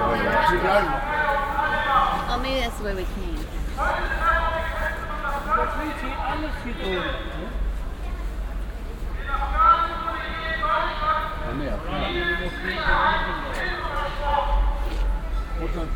cologne, Burgmauer, Taxifahrer Konversation - koeln, burgmauer, taxistand 03
konversationen wartender taxifahrer an kölns touristenmeile dom - zufalls aufnahmen an wechselnden tagen
soundmap nrw: social ambiences/ listen to the people - in & outdoor nearfield recordings
burgmauer, taxistand am dom